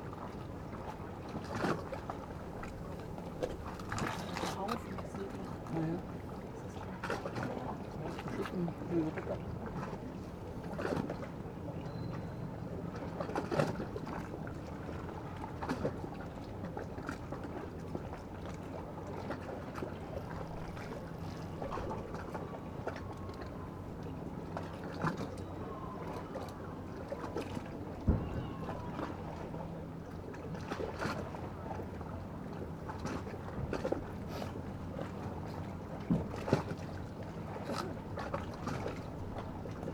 Müggelsee, Köpenick, Berlin, Deutschland - pier ambience
sitting on the pier in the early autumn sun, at Müggelsee near Berlin
(Sony PCM D50)
Berlin, Germany, 25 September 2016